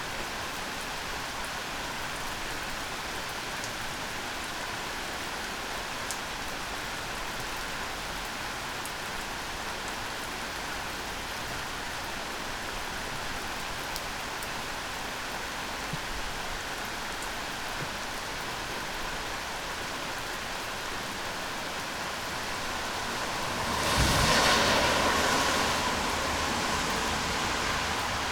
while windows are open, Maribor, Slovenia - night rain
August 2014